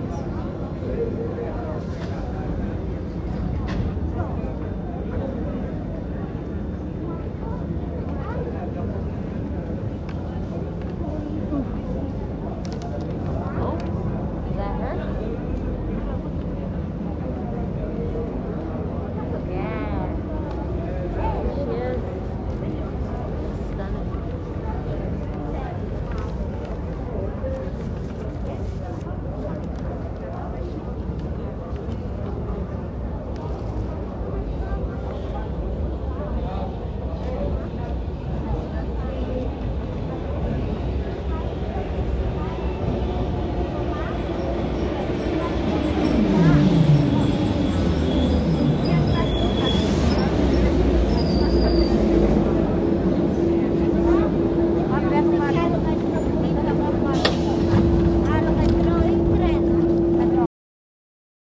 Ermou, Athina, Greece - Monastiraki Sounds
Sounds located at the heart of Athens, during a Friday night, when the night life is just beginning.